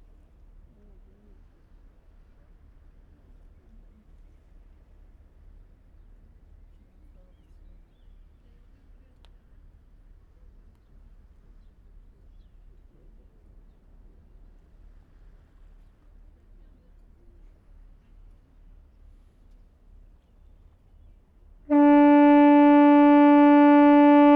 Seahouses breakwater, UK - Foghorn ... Seahouses ...
Foghorn ... Seahouses harbour ... air powered device ... open lavaliers clipped to baseball cap ... background noise ... voices ...
26 September 2017, ~14:00